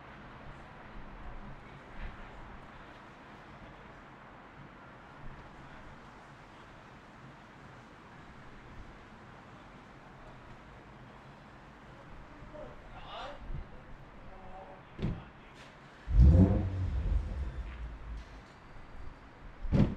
Church Ln, Belfast, UK - Church Lane
Recording in front of two bars which are now closed (Bullitt and Bootleggers), quiet movement from a passerby, chatter, bicycles, and a little bit of wind. This is five days after the new Lockdown 2 in Belfast started.
County Antrim, Northern Ireland, United Kingdom, 21 October 2020